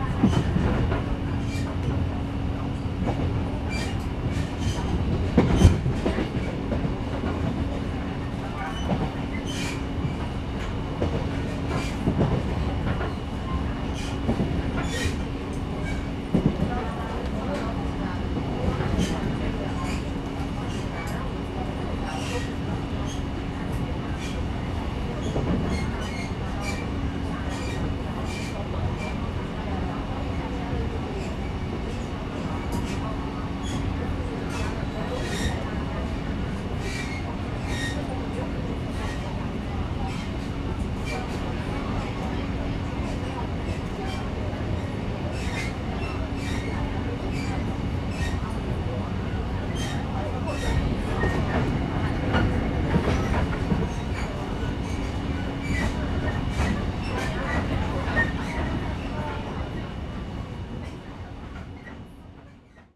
Fengshan, kaohsiung - inside the Trains
inside the Trains, Sony Hi-MD MZ-RH1, Rode NT4
鳳山區 (Fongshan), 高雄市 (Kaohsiung City), 中華民國, 25 February 2012